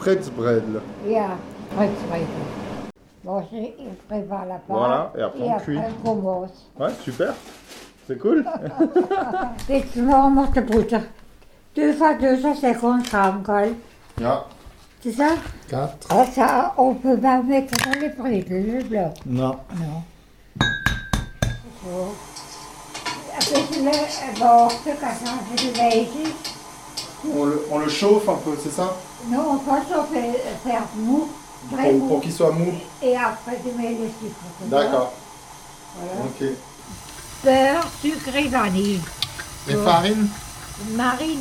Rue du Général de Gaulle, Drusenheim, France - Mamama cooking Spretz Bredele
Mamama cooking Spretz Bredele (german christmas butter cookies) with her grand son, she teaches him how to do.
She died 4 days later, transmission has been done, and she's gone.